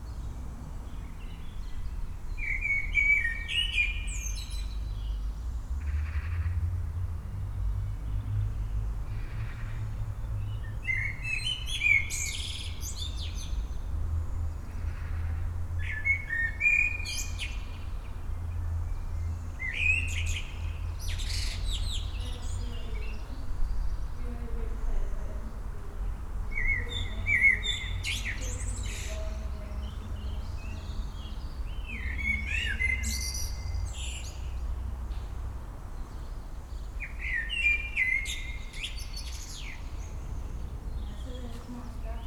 ambience near an abandoned factory site, sounds of people inside the building, attending a field recording workshop held by Peter Cusack and me.
(Sony PCM D50, DPA4060)